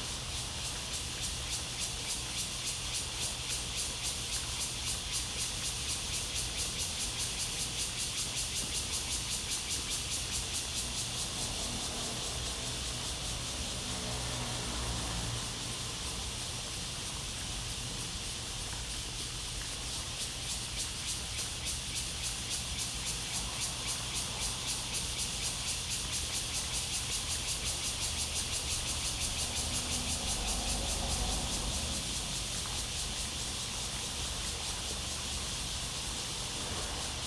淡水海關碼頭, New Taipei City - Facing the river
At the quayside, Cicadas cry, The sound of the river, Traffic Sound
Zoom H2n MS+XY
New Taipei City, Taiwan